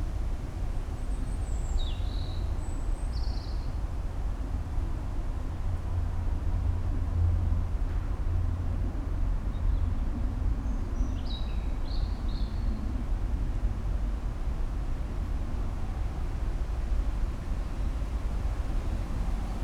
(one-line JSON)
{"title": "Praha, new jewish cemetery", "date": "2011-06-23 13:52:00", "description": "soundscape at new jewish cemetery. sounds of the nearby container station in the background", "latitude": "50.08", "longitude": "14.48", "altitude": "275", "timezone": "Europe/Prague"}